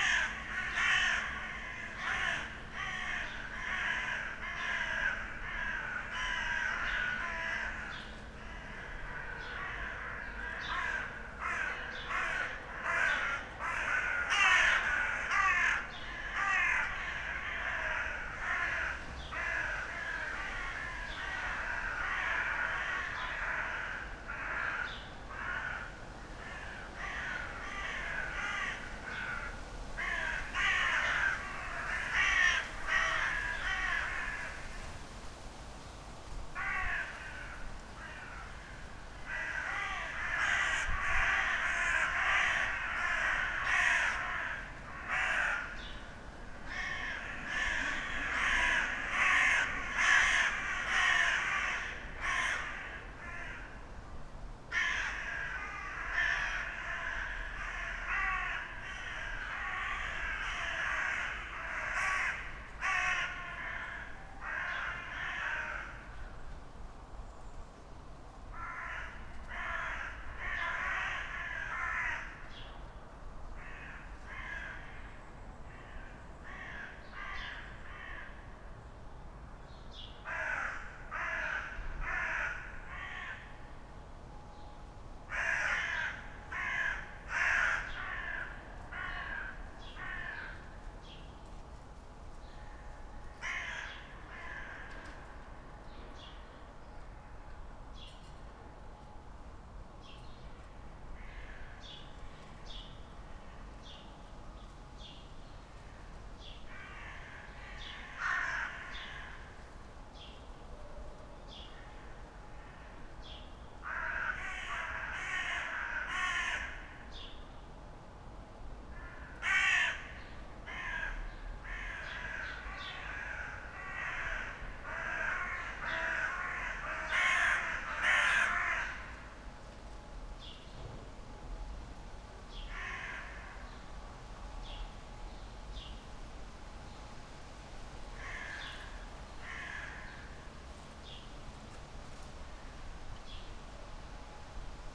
Berlin Bürknerstr., backyard window - dun crows
suddenly, a bunch of dun crows (corvus cornix) invaded my backyard
(Sony PCM D50 internal mics)